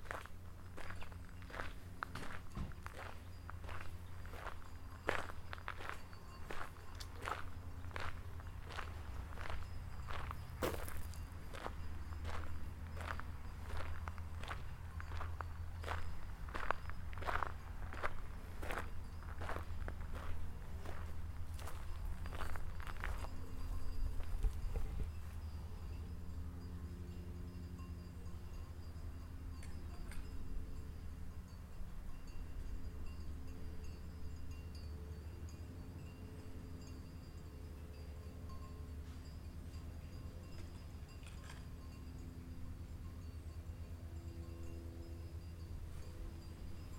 Espace culturel Assens, um das Haus
rund um das Espace culturel in Assens, ländliche Idylle mit Unterbrüchen